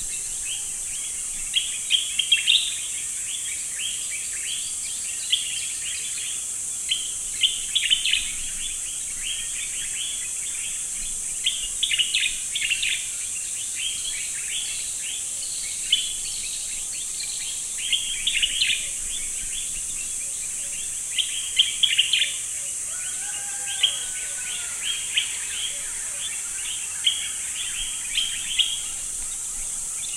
Unnamed Road, Gambia - Mara Kissa dawn
Dawn in Mara Kissa near river, during mango season.
Brikama, West Coast, The Gambia, 20 June 2004